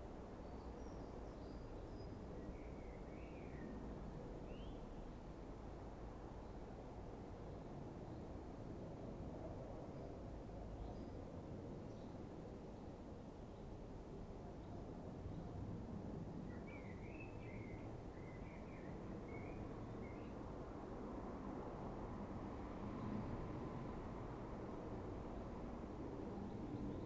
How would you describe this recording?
A 20 minute mindfulness meditation following the breath. Recorded employing a matched pair of Sennheiser 8020s either side of a Jecklin Disk and a Sound Devices 788T.